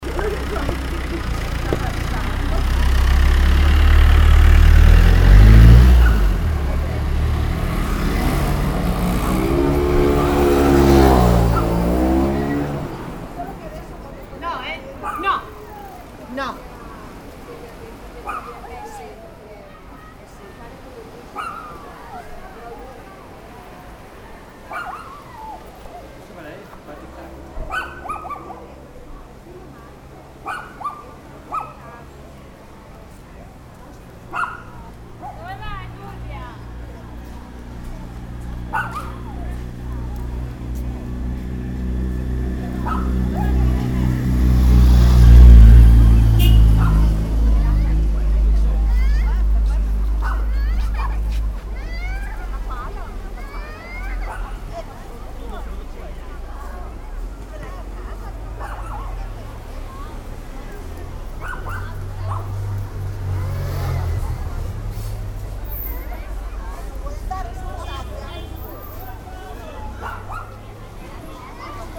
early evening at the beach promenade, traffic passing by, people stroll along with flip flops a dog barking continously
soundmap international: social ambiences/ listen to the people in & outdoor topographic field recordings
via roma, 2 August 2009